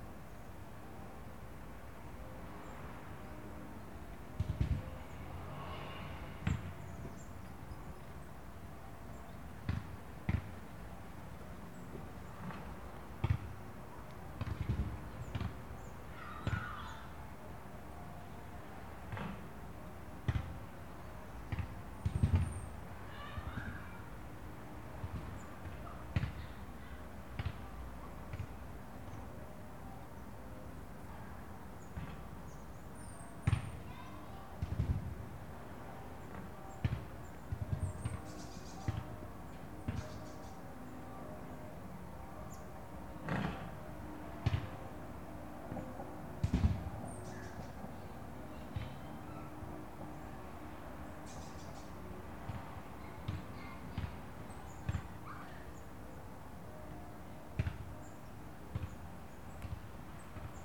Bytów, Polska - szkoła, school
Dźwięki nagrane w ramach projektu: "Dźwiękohistorie. Badania nad pamięcią dźwiękową Kaszubów." The sounds recorded in the project: "Soundstories. Investigating sonic memory of Kashubians."
Bytów, Poland, 17 June 2014, ~18:00